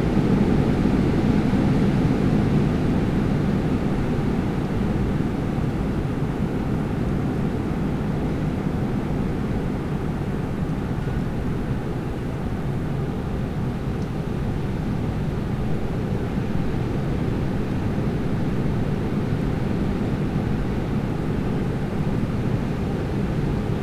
seedorf: ehemaliges schulhaus - the city, the country & me: in front of former school house
during storm
the city, the country & me: march 8, 2013